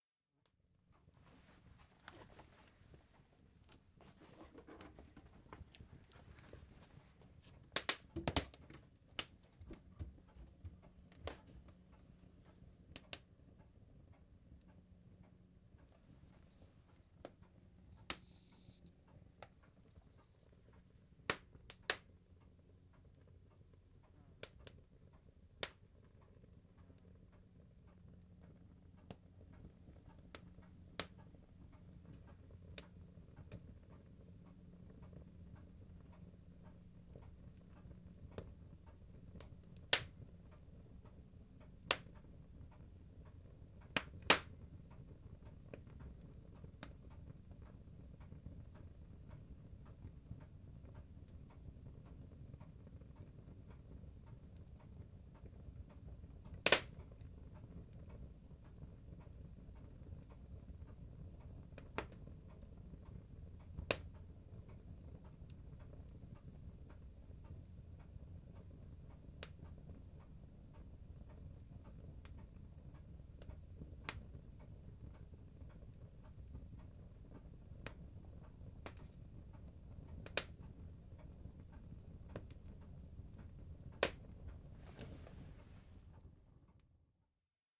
This recording features the sound of an open peat fire burning in a traditional croft house, at The Croft House Museum. Thanks to Laurie from the Shetland Museum and Archives for building the peat fire for me, and for explaining the importance of the open peat fire as a sound in historic Shetland domestic soundscapes. Recorded with Naiant X-X microphones suspended near the fire.